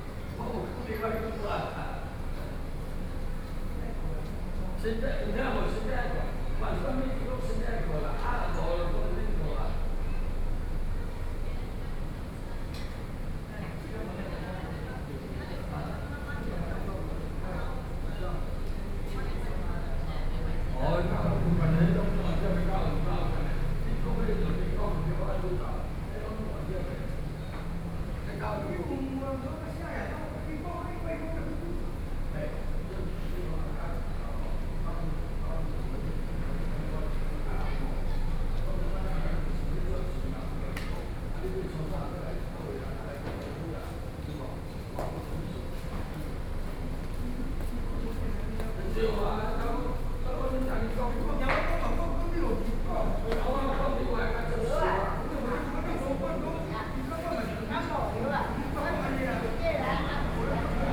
Zhuwei, Tamsui District - Chat
Several elderly people in front of the temple plaza, chat, Binaural recordings, Sony PCM D50 + Soundman OKM II